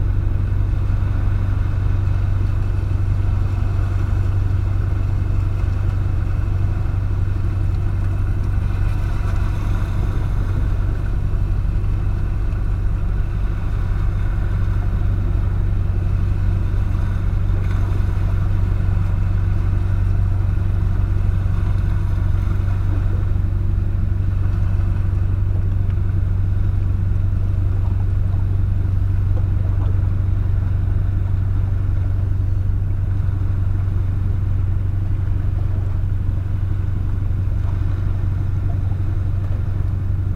ooij, rhine, stone polder
On a stone polder at the river rhine - which is here called - de wal. The sound of the ships passing by and the water gurgle in between the stones. In the distance the waves on the nearby sand beach on a fresh mild windy day in fall.
international ambiences and topographic field recordings
Haalderen, The Netherlands, 4 November 2011, 15:37